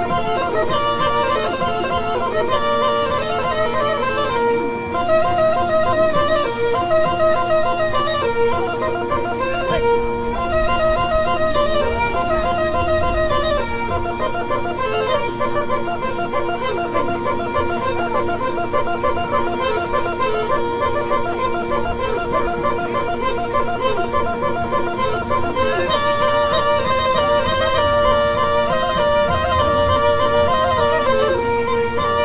{"title": "M.Lampis: Cabras - Ultimo Ballo", "latitude": "39.93", "longitude": "8.53", "altitude": "8", "timezone": "GMT+1"}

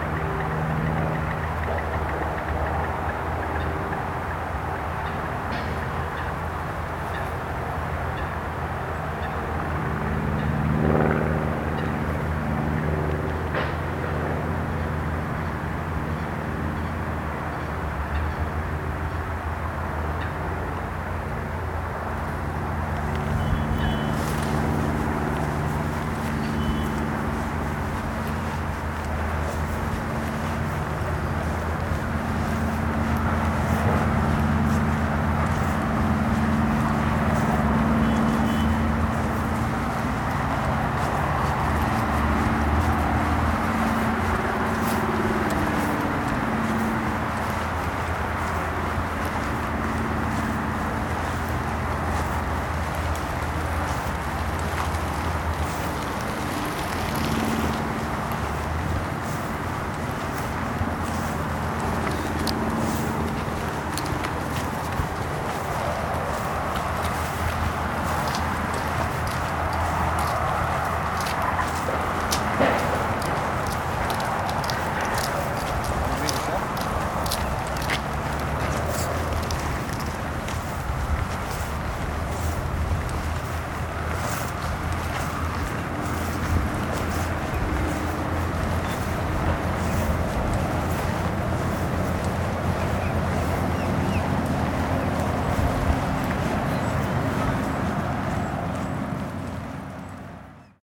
{"title": "Limerick City, Co. Limerick, Ireland - walking south towards the wetlands", "date": "2014-07-18 14:26:00", "description": "road traffic noise from Condell Road, propeller aircraft overhead, birds", "latitude": "52.66", "longitude": "-8.65", "altitude": "5", "timezone": "Europe/Dublin"}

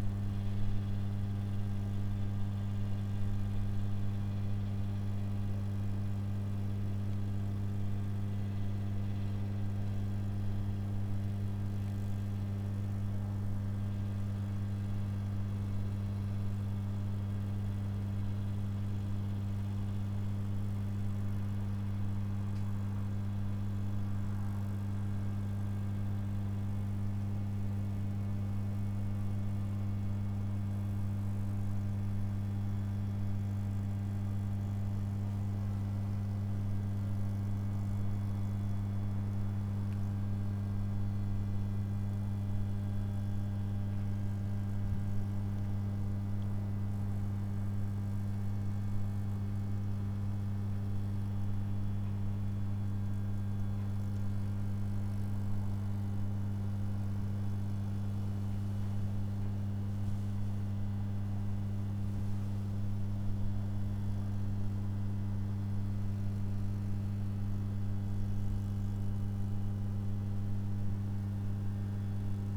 {"title": "Srem, outskirts, near premises of closed foundry - power distribution", "date": "2013-04-07 15:28:00", "description": "sounds of power distribution station, dog barks echoing among walls of big, concrete foundry buildings", "latitude": "52.07", "longitude": "17.03", "altitude": "80", "timezone": "Europe/Warsaw"}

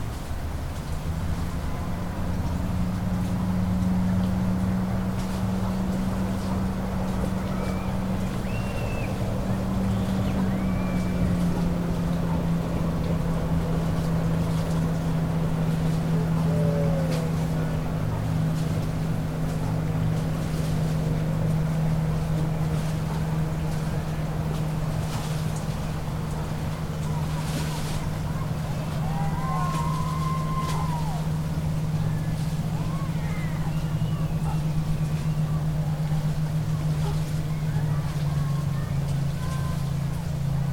{"title": "Three Pines Rd., Bear Lake, MI, USA - Boat Parade (Bear Lake Days)", "date": "2014-07-11 20:50:00", "description": "On the north shore of Bear Lake. The annual boat parade during Bear Lake Days festival. About a dozen boats pass, some with music and cheering. A few birch catkins fall nearby. The wake of the boats eventually hits the lakeshore. Stereo mic (Audio-Technica, AT-822), recorded via Sony MD (MZ-NF810).", "latitude": "44.44", "longitude": "-86.16", "altitude": "238", "timezone": "America/Detroit"}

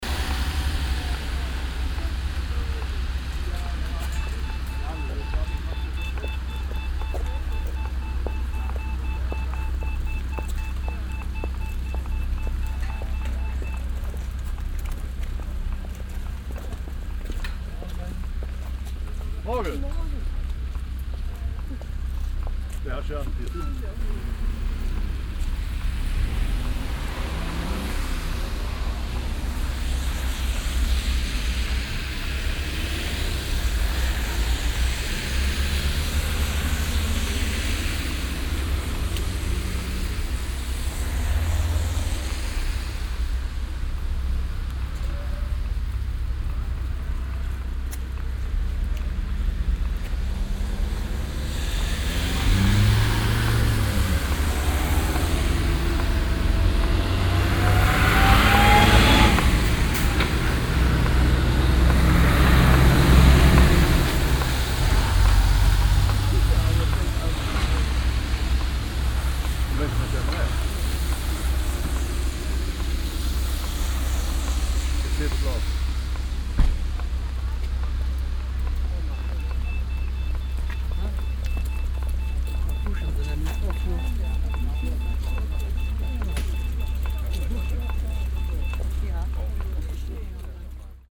{"title": "refrath, dolmanstrasse, ampelanlage", "description": "taktgeräusche und signale der ampelanlage, passanten und verkehr, morgens\nsoundmap nrw:\nsocial ambiences/ listen to the people - in & outdoor nearfield recording", "latitude": "50.96", "longitude": "7.11", "altitude": "75", "timezone": "GMT+1"}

